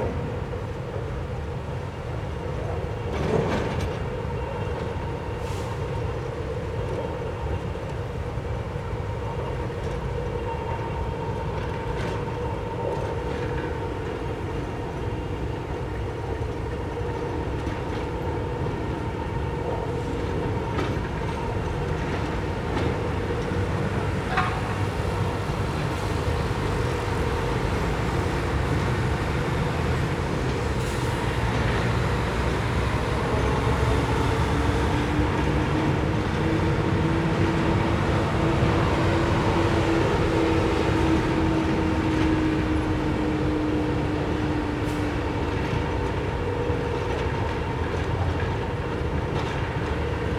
Luzhou Station, New Taipei City - Sound from construction site
Sound from construction site
Rode NT4+Zoom H4n